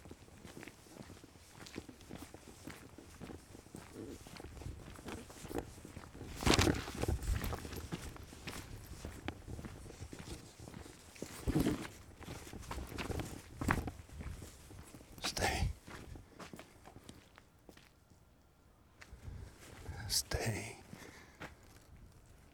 Skoczów, Poland - meet my walk 04
special recording by Wojciech Kucharczyk for the project with Carsten Stabenow for Art Meetings Festival, Kiev, 2015.
part 04/04.
zoom H2.
(this is where I live, this is where I walk)
(texts by me)